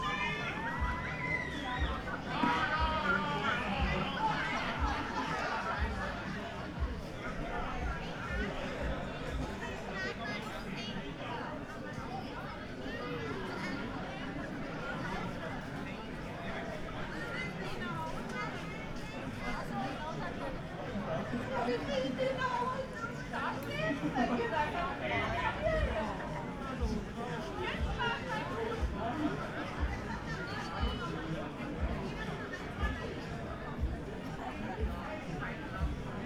Bestensee, Deutschland - tombola at Gaststätte Seeblick
weekend summer party and tombola, village of Bestensee
(Sony PCM D50, Primo EM172)
Bestensee, Germany, 23 July, 20:30